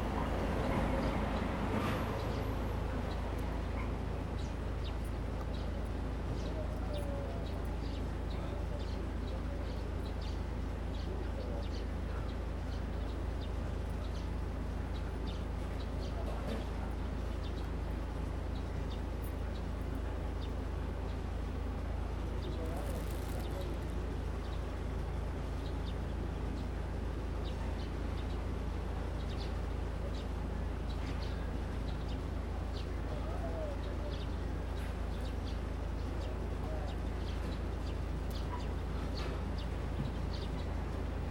Birdsong sound, Sitting beside the road, Quiet little town, Traffic Sound, Very hot weather
Zoom H2n MS+XY